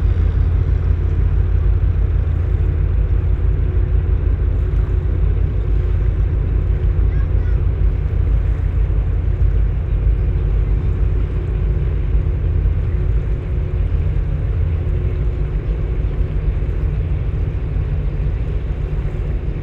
tank ship manoeuvring in the harbour
the city, the country & me: june 11, 2013
Urk, The Netherlands, 11 June 2013, 19:12